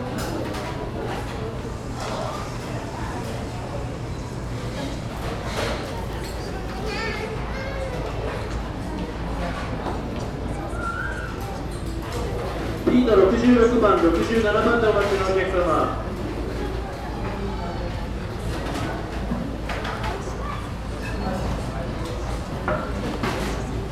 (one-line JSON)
{"title": "nagano expy, highway restaurant", "date": "2010-07-25 16:27:00", "description": "inside a crowded highway restaurant at noon on a sunday - anouncements of the cook and waiters\ninternational city scapes and social ambiences", "latitude": "36.20", "longitude": "137.93", "altitude": "610", "timezone": "Asia/Tokyo"}